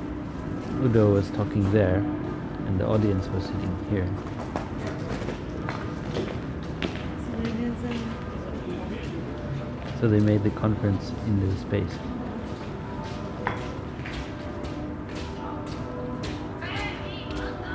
returning to the Alexanderplatz TV tower in winter, Aporee workshop
radio aporee sound tracks workshop GPS positioning walk part 6 winter 2010
Berlin, Germany, 1 February 2010